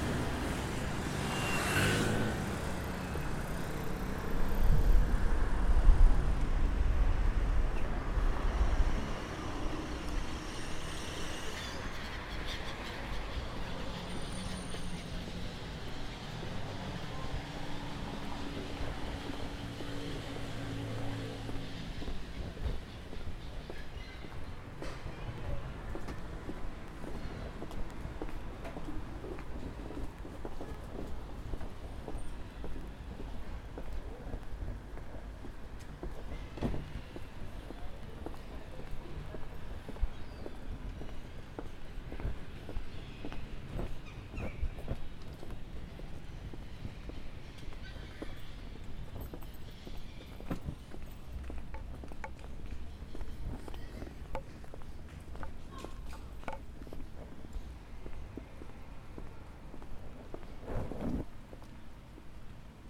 Van Woustraat, Amsterdam, Nederland - Oversteek momentje / Crossing moment
(description in English below)
Het is oppassen geblazen op de drukke van Woustraat. Deze straat steek je niet zomaar over, zeker niet met kinderen. Om aan de andere kant van de wijk te komen, kun je niet om dit oversteekmomentje heen. De rust keert vrij snel terug zodra dit punt gepasseerd is. Dan keert de rust weer terug.
You have to watch out while you are at the van Woustraat. This street you don't cross just at random, especially not with children. To get to the other part of the neighbourhood, you're forced to cross this street. The peace returns quite quickly once this point is passed and the tranquility of the neighbourhood returns.
Amsterdam, The Netherlands, 10 September